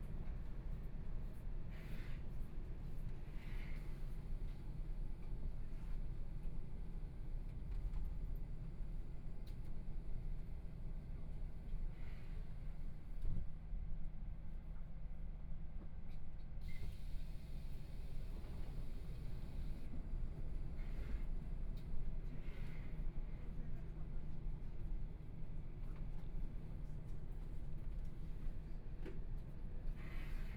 Ji'an Township, Hualien County - After the accident
Interior of the case, The dialogue between the passenger, Train message broadcasting, This recording is only part of the interceptionTrain Parking, Binaural recordings, Zoom H4n+ Soundman OKM II
Hualien County, Taiwan, 18 January 2014